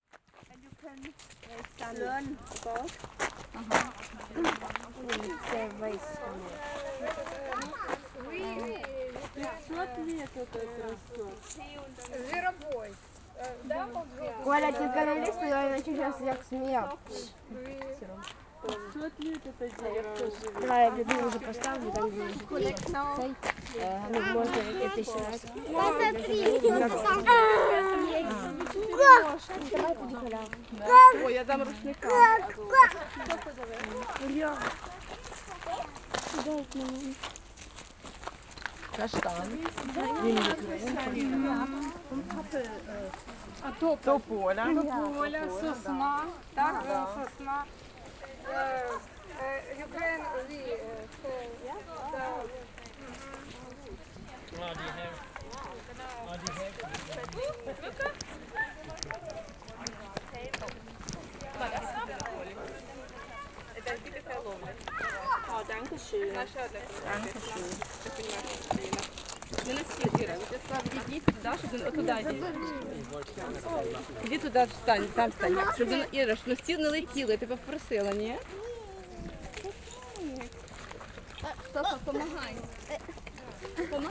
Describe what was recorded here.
Audio documentation of an excursion to the forest with Ukrainian women and children